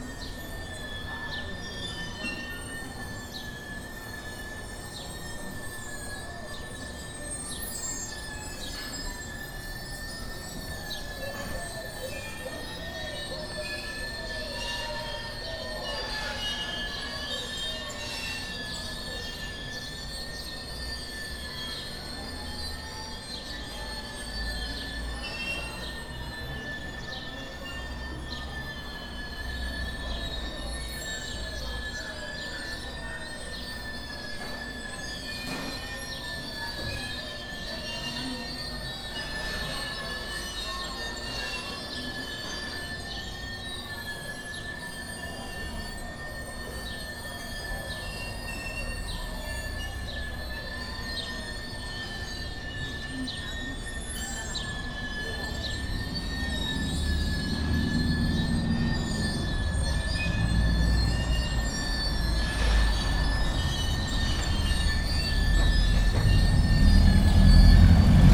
C. Ignacio Rayón, Obregon, León, Gto., Mexico - Tortillería “El Globo” grabada desde la cuadra de enfrente y una Combi pasando al final.
Tortilleria "El Globo" was recorded from the block in front and a Combi passed by at the end of the recording.
I made this recording on june 5th, 2022, at 8:14 p.m.
I used a Tascam DR-05X with its built-in microphones and a Tascam WS-11 windshield.
Original Recording:
Type: Stereo
Esta grabación la hice el 5 de junio de 2022 a las 8:14 horas.